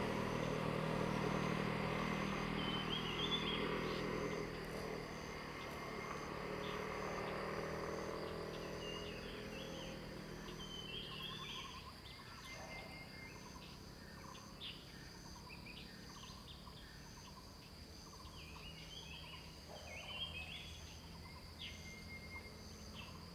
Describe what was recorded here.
Birds called, Birds singing, Zoom H2n MS+XY